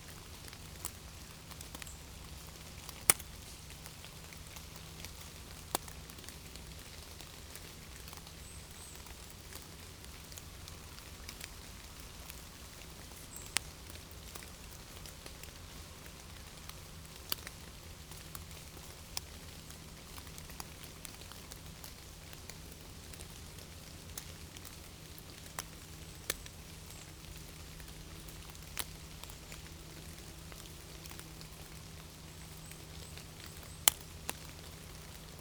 Nod-sur-Seine, France - Sad rain
Walking by the woods in a quite sad place, rain is falling slowly. On this morning, we are absolutely alone in the forests and the fields during hours.
31 July 2017